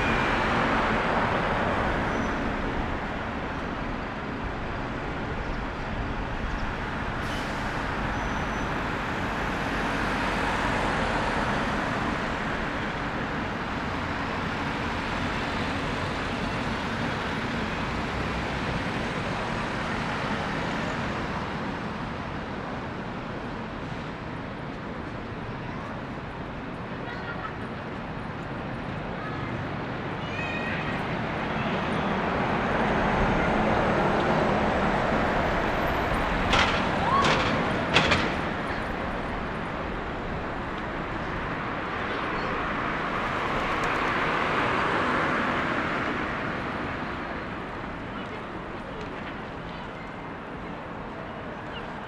Colenso Parade, Belfast, UK - St Patricks Day Belfast
Doubled recording stitched together, beginning near Belfast City Hall where the St. Patricks Parade would usually march off from. Without the annual parade, there is only regular city noises from birds, dogs walked, vehicles, cyclists, and pedestrians.
The second half of the recording ventures into Botanic Gardens, in which, large groups of people who were set up partying in the middle of the big empty field. There was dancing, shouting, balls kicked, mixed in with the wildlife of the park. The ending of the recording has the park staff closing some of the gates to direct people through main gates as the police came in to disperse the crowd.